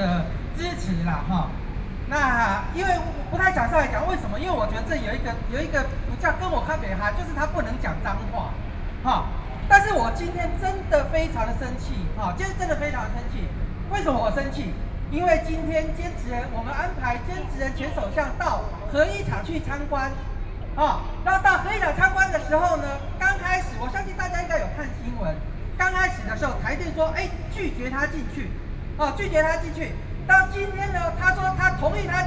Chiang Kai-Shek Memorial Hall, Taipei City - speech
anti–nuclear power, Zoom H4n + Soundman OKM II
Taipei City, Taiwan, 13 September